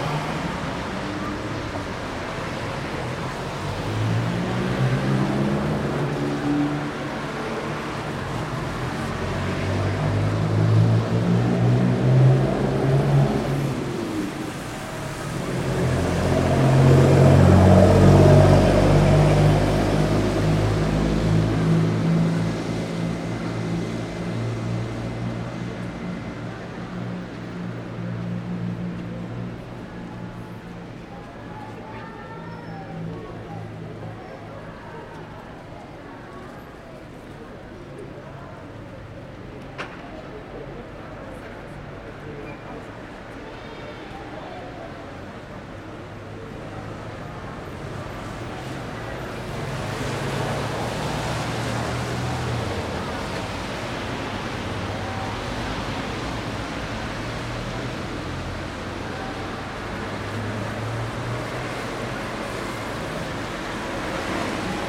Strada Mureșenilor, Brașov, Romania - 2016 Christmas in Brasov
Soundscape on a central street in Brasov, Romania. A church bell ringing, voices, traffic. Some kids in the distance try to sing a carol to ask for money. Recorded with Superlux S502 Stereo ORTF mic and a Zoom F8 recorder.